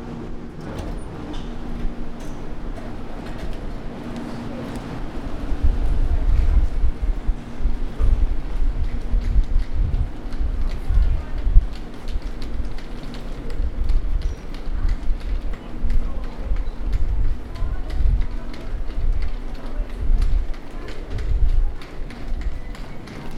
Orlando Airport, Florida, Going through security and waiting at terminal. Machinery, Institutional design. Field
FL, USA, 10 June 2010